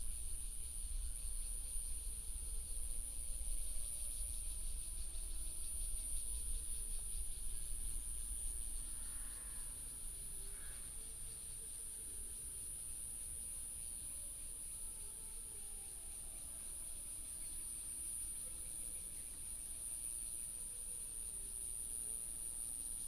義民路二段380巷57弄, Xinpu Township - High-speed railway train
Cicada, traffic sound, birds sound, High - speed railway tunnel, High-speed railway train passing through
Hsinchu County, Taiwan, 17 August 2017